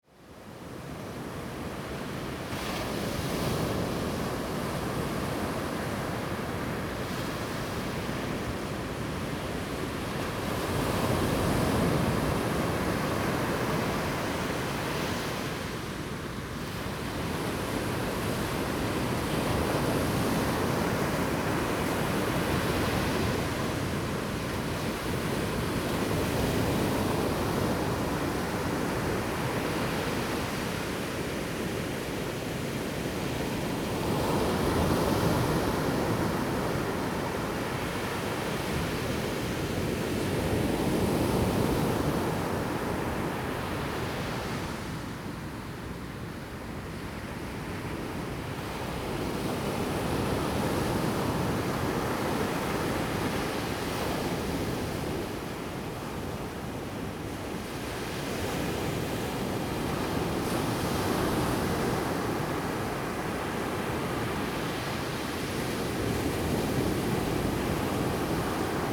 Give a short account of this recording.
Sound of the waves, On the beach, Zoom H2n MS+XY